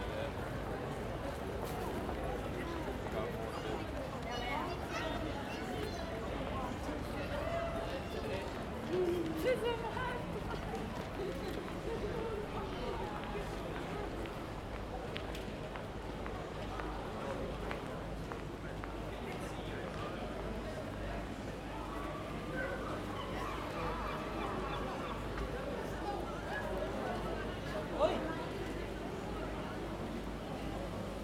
North City, Dublín, Irlanda - Earl Street North Multilingual
People walking through this passage heading Saint Patrick's parade route